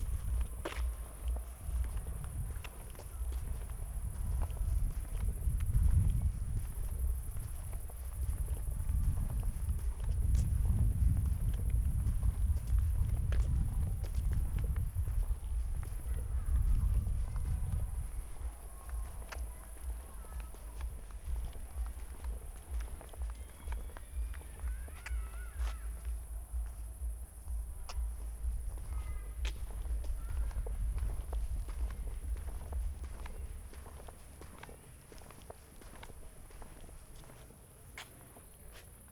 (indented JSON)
{
  "title": "Hintersiedlung, Bestensee, Deutschland - street walking",
  "date": "2016-07-23 21:15:00",
  "description": "Bestensee, Saturday summer evening, walking around Thälmannstr\n(Sony PCM D50, Primo EM172)",
  "latitude": "52.22",
  "longitude": "13.63",
  "altitude": "40",
  "timezone": "Europe/Berlin"
}